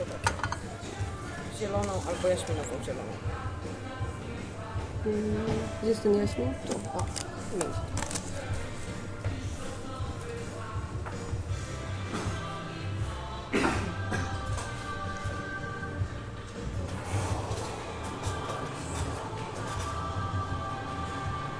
Zakopane, Krupówki, Dworzec Tatrzański, odgłosy Baru
Zakopane, Poland, 20 July 2011, 13:24